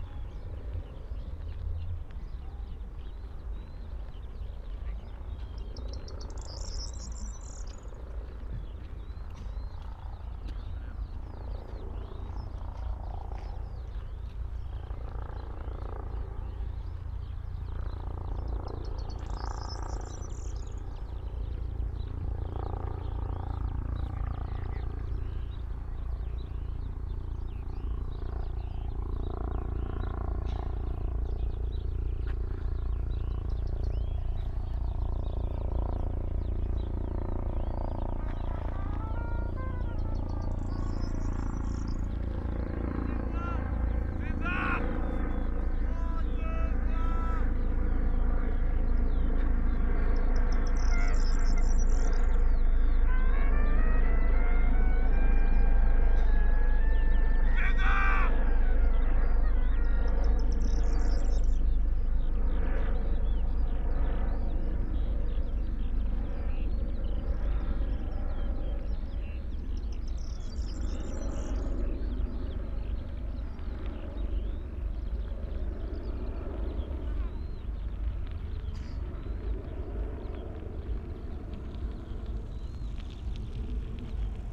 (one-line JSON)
{"title": "Tempelhofer Feld, Berlin, Deutschland - skylarks, corn bunting, musicians, helicopter", "date": "2019-06-09 17:10:00", "description": "Sunday afternoon, remote sounds from Karneval der Kulturen, musicians exercising nearby, skylarcs singing, a corn bunting (Grauammer) in the bush, a helicopter above all, etc.\n(Sony PCM D50, Primo EM172)", "latitude": "52.48", "longitude": "13.41", "altitude": "45", "timezone": "Europe/Berlin"}